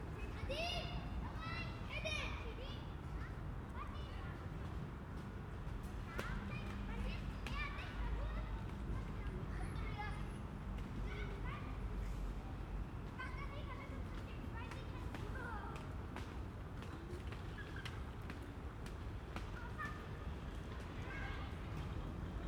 Beside the playground, Jacobystraße, Berlin, Germany - Beside the playground and the parked cars
Residential apartment blocks start very close to the concrete expanse of Alexanderplatz and the huge roads of the area. Once inside surrounded by the multi-storey buildings it is a different, much quieter, world, of car parks, green areas, trees and playgrounds. The city is very present at a distance. Sirens frequently pass, shifting their pitch at speed. But there is time for the children, rustling leaves and footsteps, even an occasional crow or sparrow.
Deutschland, 9 September 2021, 16:35